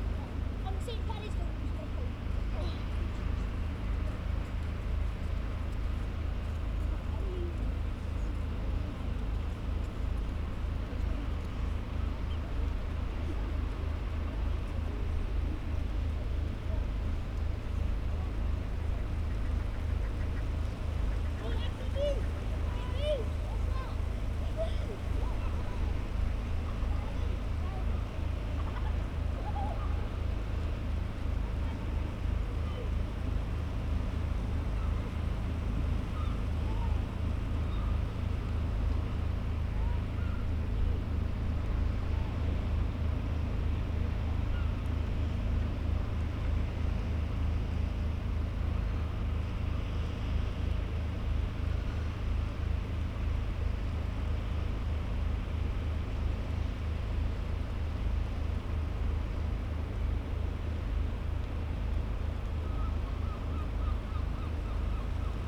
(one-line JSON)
{"title": "Henrietta St, Whitby, UK - under the east cliff ... incoming tide ...", "date": "2019-05-17 10:30:00", "description": "under the east cliff ... incoming tide ... lavalier mics clipped to bag ... bird calls from ... fulmar ... herring gull ... lesser-blacked back gull ... rock pipit ... sandwich tern ... coast guard helicopter whirrs by ... a school party wander across the beach ...", "latitude": "54.49", "longitude": "-0.61", "altitude": "21", "timezone": "Europe/London"}